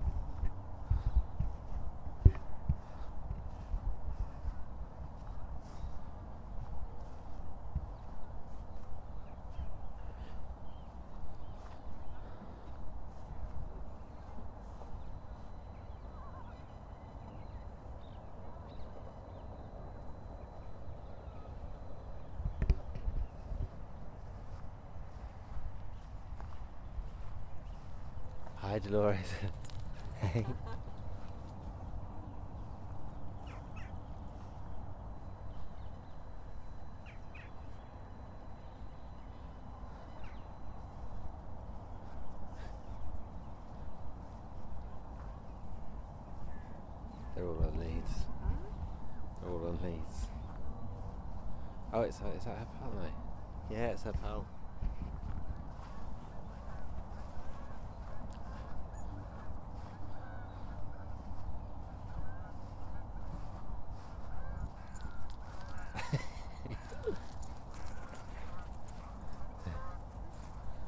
London, UK - Frosty morning dog walk
Taking the dog for a walk on a glorious crisp frosty marsh morning. The dog thinks the windjammer is a wild animal!
Greater London, England, United Kingdom, January 2022